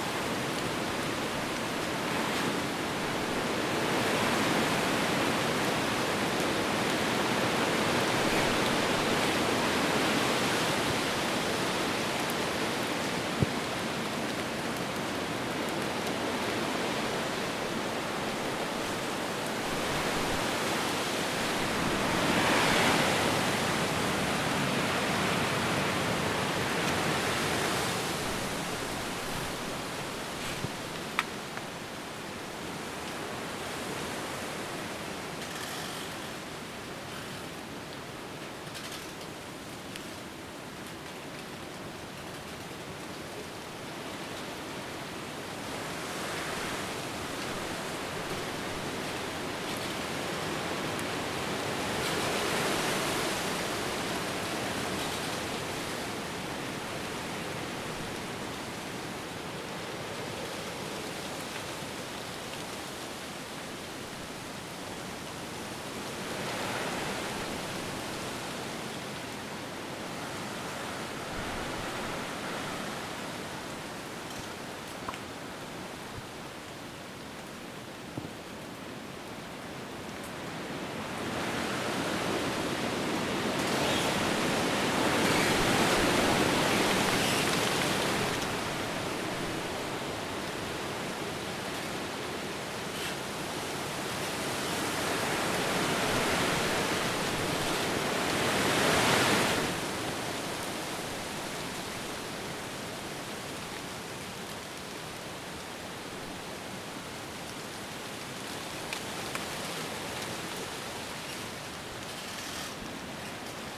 Gusts of wind in the ruins of the castle of Emblève in Aywaille, Belgium. It's winter, it's raining and some dead trees are creaking in the background.